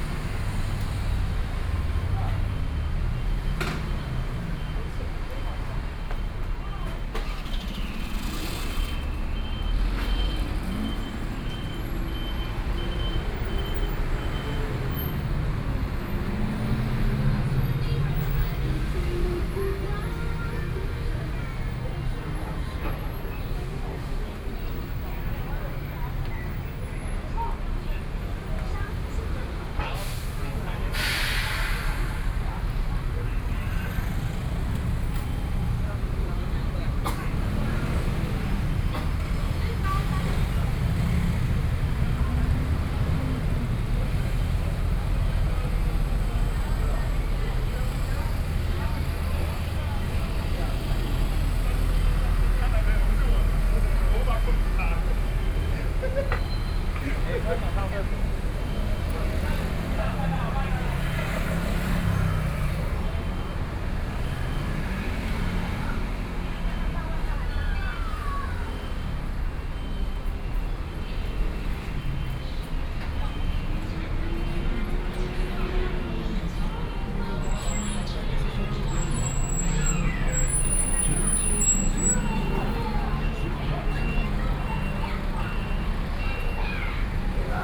Zhongzheng Rd., Shilin - soundwalk
Traffic Noise, Walking in the street, Children frolic sound, Binaural recordings, Sony PCM D50 + Soundman OKM II